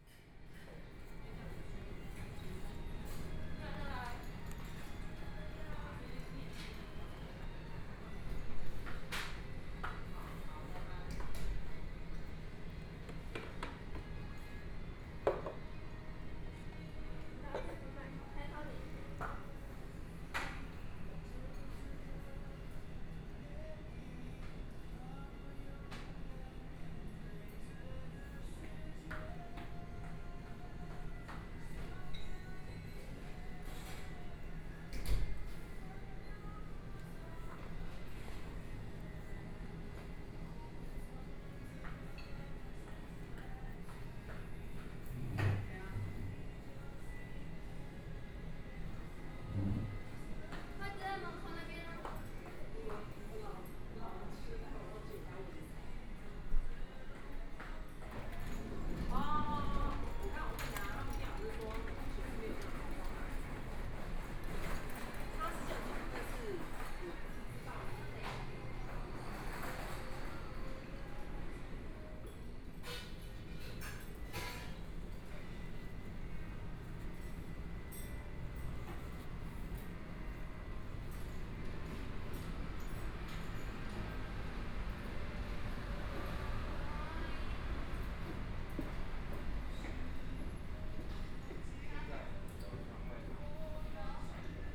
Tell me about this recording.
In the restaurant, Binaural recordings, Zoom H4n+ Soundman OKM II